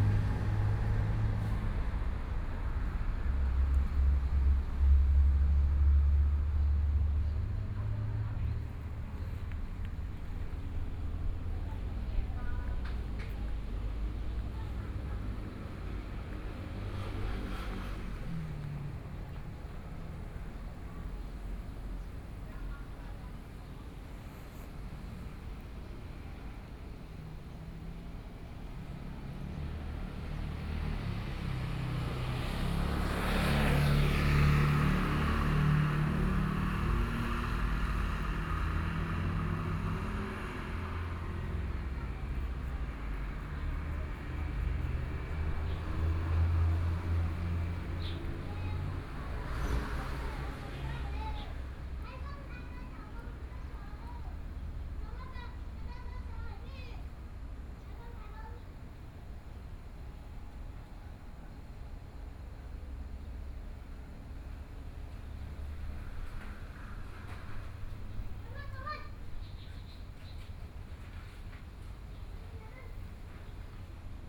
員山鄉永和村, Yilan County - Small village
Birds singing, Traffic Sound, Small village, At the roadside
Sony PCM D50+ Soundman OKM II
Yuanshan Township, Yilan County, Taiwan, 22 July 2014, 12:22